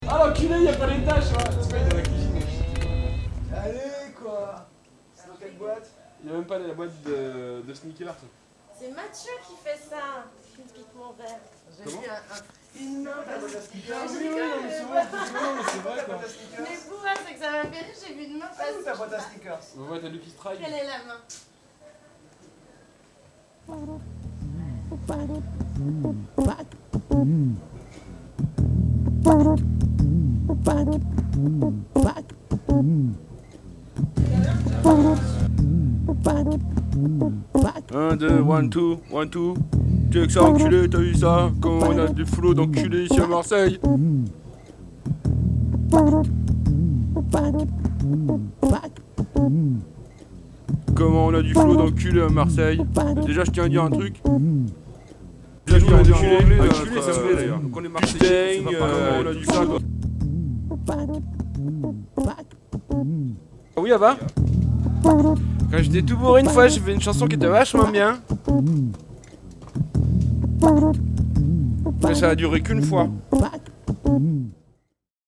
Batmat room
a microphone and a JamMan in the room.